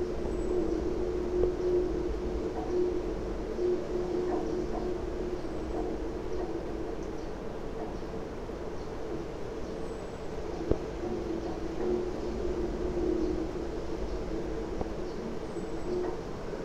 Njegoševa ulica, Maribor, Slovenia - telephone pole box
resonance inside a cable box mounted on the side of a telephone pole, captured with contact microphones